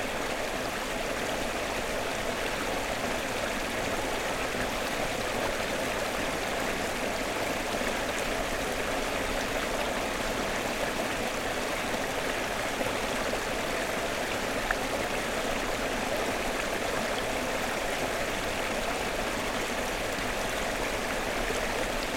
Deguliai, Lithuania, stream
litt;e river streaming through rooths and stones
Utenos rajono savivaldybė, Utenos apskritis, Lietuva